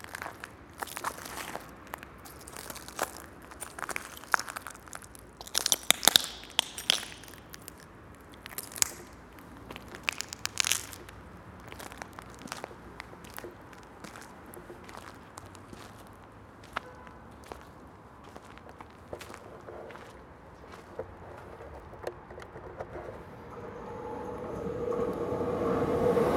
Weststation, Molenbeek-Saint-Jean/Sint-Jans-Molenbeek, Belgien - Inside Hangar near Weststation
Inside an abandonded hangar in the Wasteland along the rail tracks near Weststation. You can hear the trains and traffic from the inside of the huge hall and the broken pieces of glass that I was walking on.